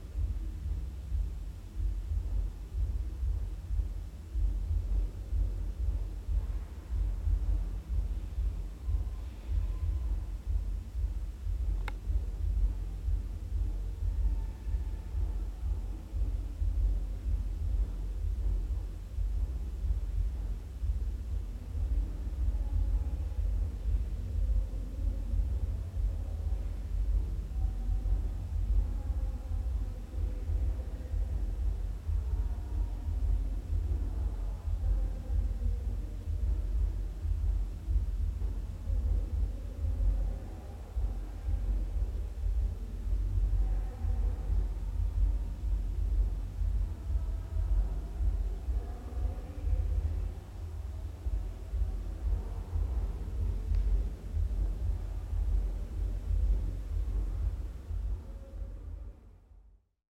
The palace of students [Room 27], Dnipro, Ukraine